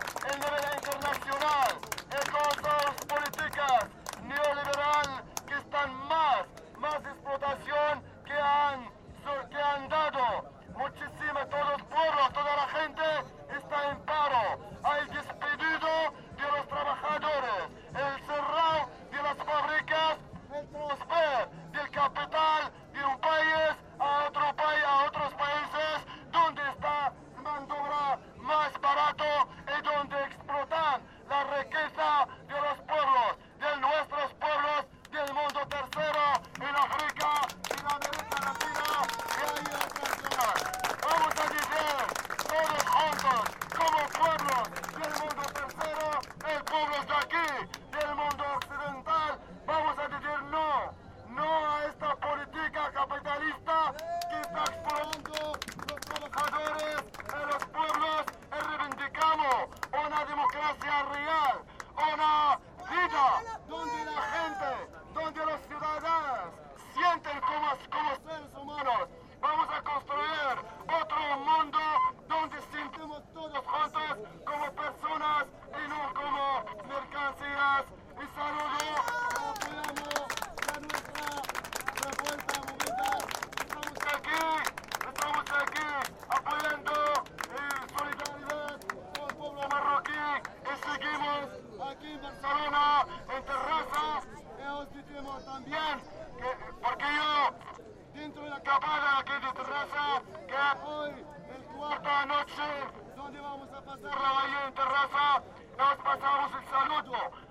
Speech done by a Morocco activist along the spanish revolution of the 15th of may. This is something it has taken place in Barcelona, but it must happen everywhere.